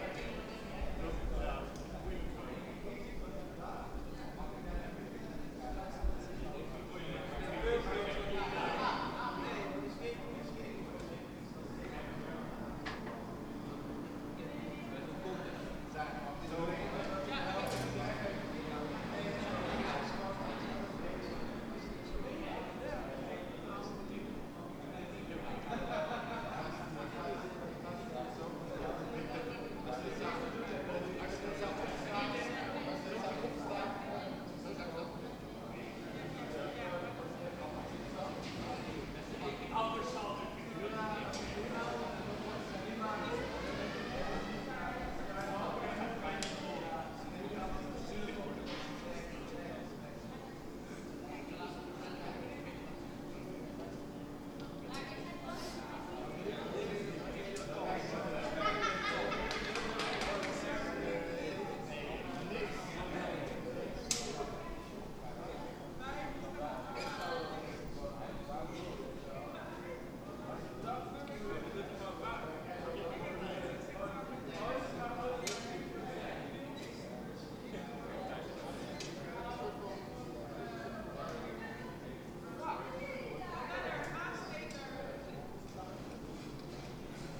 Kortenbos, Den Haag, Nederland - BBQ garden party
The sound of a BBQ party my neighbors had last summer. Recorded from my bedroom window.
Recorded with Zoom H2 internal mics.
Den Haag, Netherlands, 4 June 2015, 23:30